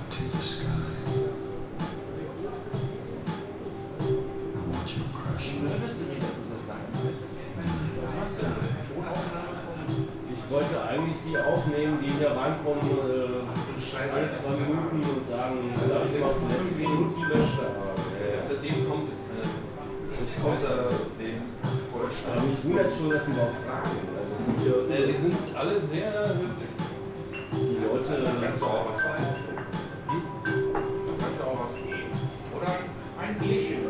berlin, ohlauer straße: - the city, the country & me: barman, guests
barman and guests talking over over passers-by who want to use the toilet of the pub
the city, the country & me: may 1, 2012
Berlin, Germany, 1 May 2012